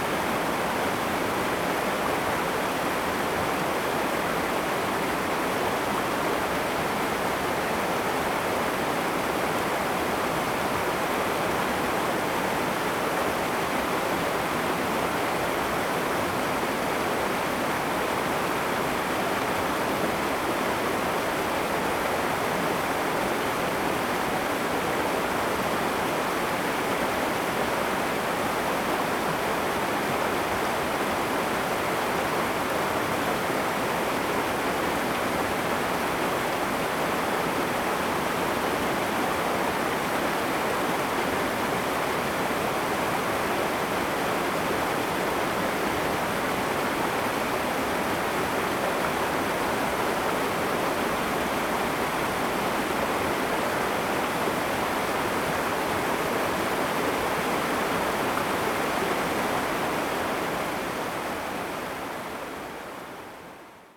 Irrigation waterway, Traffic Sound, The sound of water, Very hot weather
Zoom H2n MS+ XY
Taitung County, Guanshan Township, 7 September